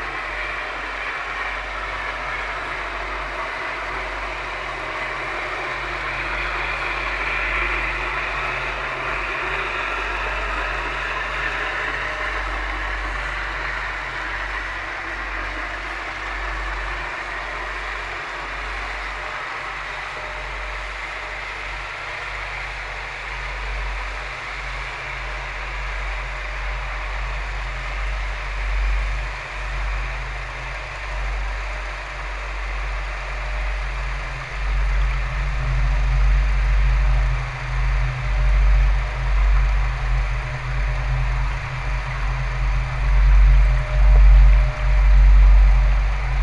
{"title": "Speedwellstraat, Rotterdam, Netherlands - Underwater recording", "date": "2022-03-07 16:00:00", "description": "Recording made using 2 hydrophones and 2 geofons attached to the handrail", "latitude": "51.90", "longitude": "4.44", "timezone": "Europe/Amsterdam"}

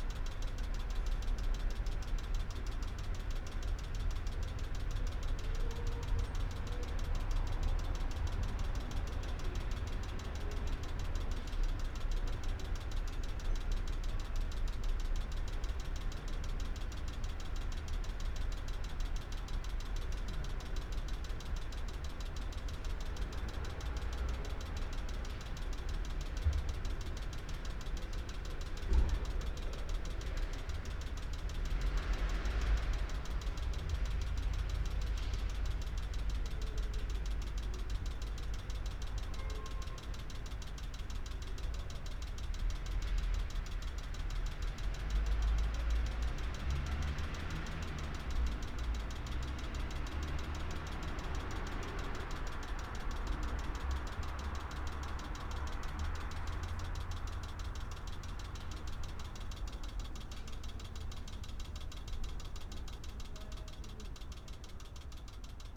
{"title": "berlin: sanderstraße - the city, the country & me: broken lamp", "date": "2014-02-27 00:06:00", "description": "flickering light of a broken lamp\nthe city, the country & me: february 27, 2014", "latitude": "52.49", "longitude": "13.43", "timezone": "Europe/Berlin"}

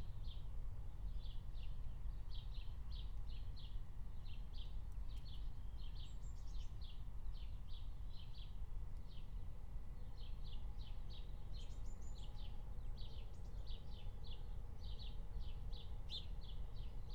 {"title": "Berlin, Tempelhofer Feld - former shooting range, ambience", "date": "2020-06-02 05:00:00", "description": "05:00 Berlin, Tempelhofer Feld", "latitude": "52.48", "longitude": "13.40", "altitude": "44", "timezone": "Europe/Berlin"}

